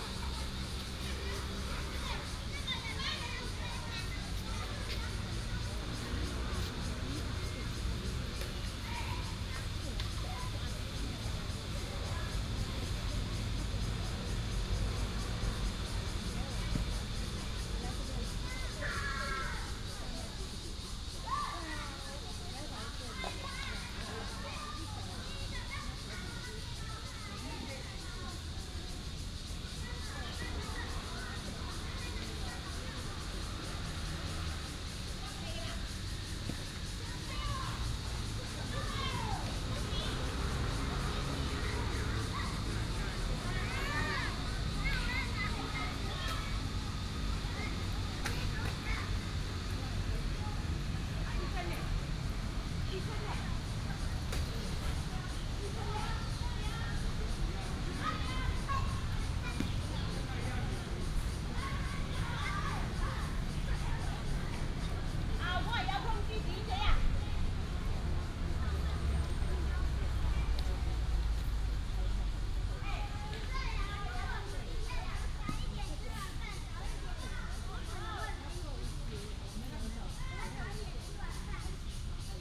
夢幻公園, Zhongli Dist., Taoyuan City - in the park
in the park, Children, Cicada cry, traffic sound, Binaural recordings, Sony PCM D100+ Soundman OKM II